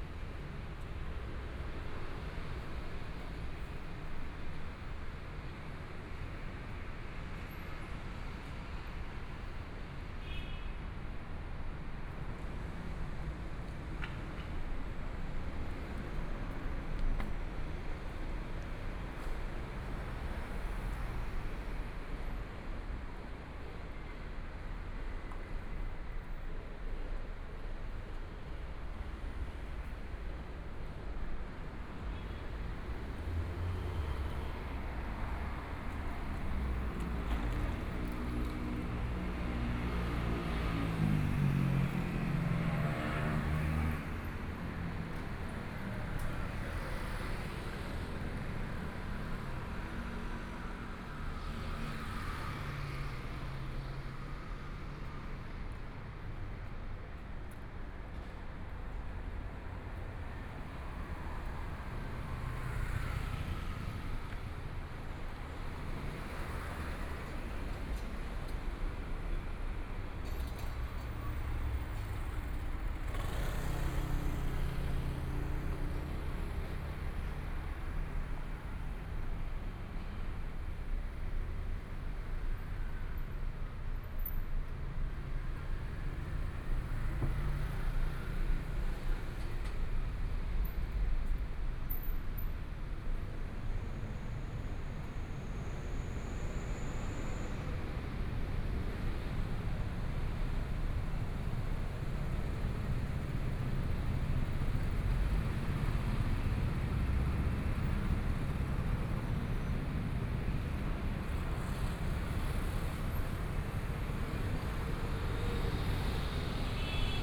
{"title": "Xinsheng N. Rd., Zhongshan Dist. - walking on the Road", "date": "2014-02-17 19:04:00", "description": "walking on the Road, Traffic Sound\nPlease turn up the volume\nBinaural recordings, Zoom H4n+ Soundman OKM II", "latitude": "25.05", "longitude": "121.53", "timezone": "Asia/Taipei"}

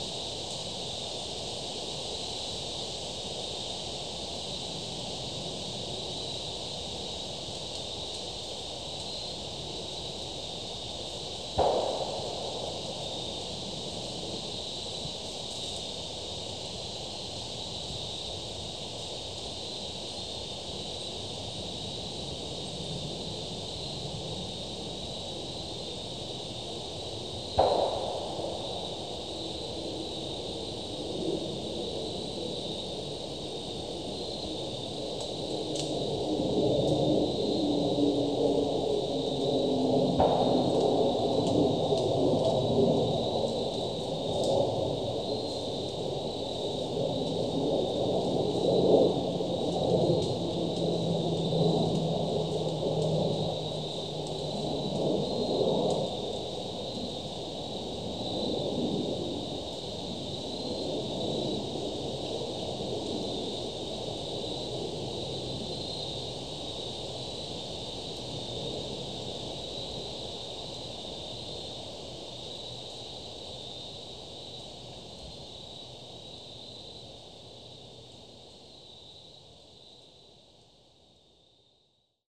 {"title": "Carney, MD, USA - Gunshots in Forest", "date": "2016-10-09 18:00:00", "description": "Recorded on a hiking trail at dusk with a Tascam DR-40. A series of gunshots can be heard from the nearby shooting range.", "latitude": "39.41", "longitude": "-76.50", "altitude": "79", "timezone": "America/New_York"}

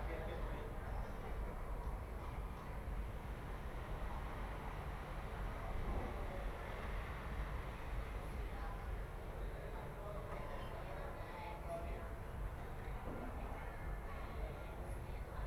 {"title": "Ascolto il tuo cuore, città, I listen to your heart, city. Several chapters **SCROLL DOWN FOR ALL RECORDINGS** - Noon’s bells with dog at Easter in the time of COVID19 Soundscape", "date": "2020-04-12 11:30:00", "description": "\"Noon’s bells with dog at Easter in the time of COVID19\" Soundscape\nChapter LXII of Ascolto il tuo cuore, città. I listen to your heart, city\nSunday April 12th 2020. Fixed position on an internal terrace at San Salvario district Turin, thirty three days after emergency disposition due to the epidemic of COVID19.\nStart at 11:30 a.m. end at 00:35 p.m. duration of recording 1h:05’:00”", "latitude": "45.06", "longitude": "7.69", "altitude": "245", "timezone": "Europe/Rome"}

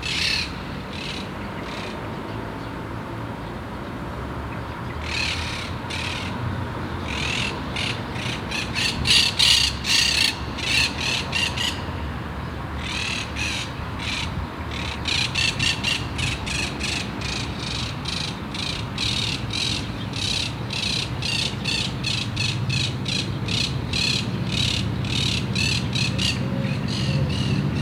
{"title": "Sevilla, Provinz Sevilla, Spanien - Sevilla, parlament city park, birds", "date": "2016-10-09 16:15:00", "description": "In the city park at the parlament building. The sound of birds in the high palm trees and cars and motorcycles from the nearby street on a warm autum afternoon.\ninternational city sounds - topographic field recordings and social ambiences", "latitude": "37.40", "longitude": "-5.99", "altitude": "13", "timezone": "Europe/Madrid"}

